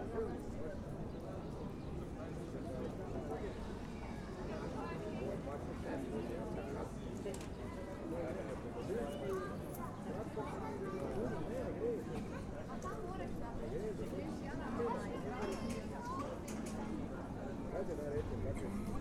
Grajski trg, Maribor, Slovenia - corners for one minute
one minute for this corner - grajski trg, by the chapel with black mary
August 2012